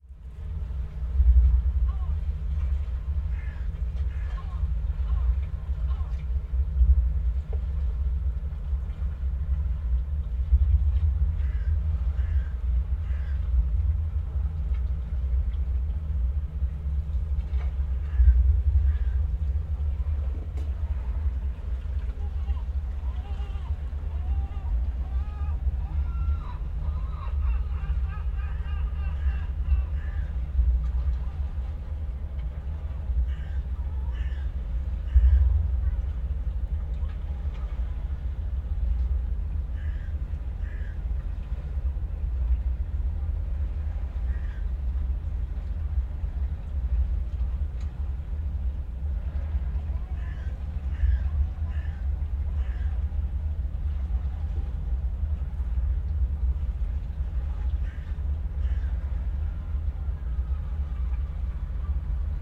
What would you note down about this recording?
in a small concrete refuge at the waterfront, probably used to protect workers from strong waves, (SD702, DPA4060)